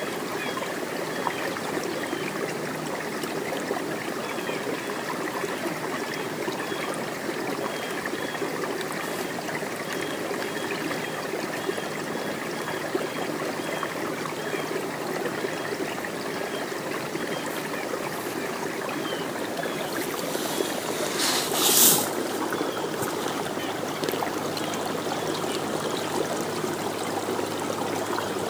Vassar College, Raymond Avenue, Poughkeepsie, NY, USA - Vassar Farm, fresh snow, sunny afternoon, water trickling under thin ice downstream from beaver dam
Standing on a boardwalk above a stream and swampy area created by a beaver dam, thin ice with water trickling below